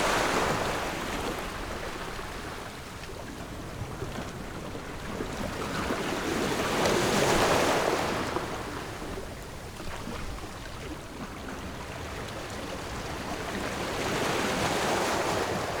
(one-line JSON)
{"title": "Jizanmilek, Koto island - Sound of the waves", "date": "2014-10-29 13:31:00", "description": "Sound of the waves\nZoom H6 +Rode NT4", "latitude": "22.06", "longitude": "121.57", "altitude": "9", "timezone": "Asia/Taipei"}